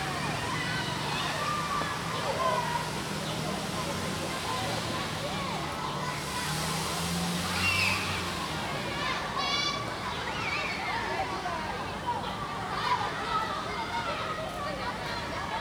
昌隆國小, Xinzhuang Dist., New Taipei City - Next to primary school
Children and Next to primary school
Sony Hi-MD MZ-RH1+AKG c1000
New Taipei City, Taiwan, June 2011